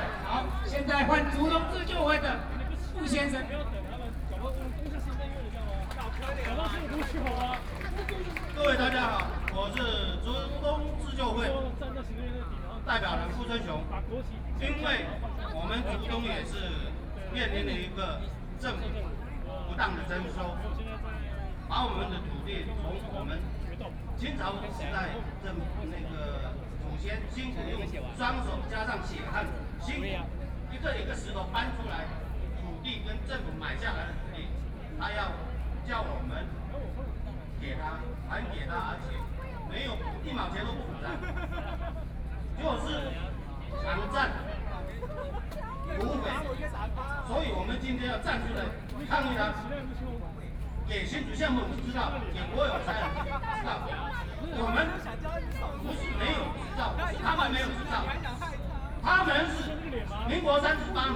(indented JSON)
{
  "title": "Ketagalan Boulevard, Zhongzheng District - Speech",
  "date": "2013-08-18 20:56:00",
  "description": "Protest, Self-Help Association of speech, Sony PCM D50 + Soundman OKM II",
  "latitude": "25.04",
  "longitude": "121.52",
  "altitude": "8",
  "timezone": "Asia/Taipei"
}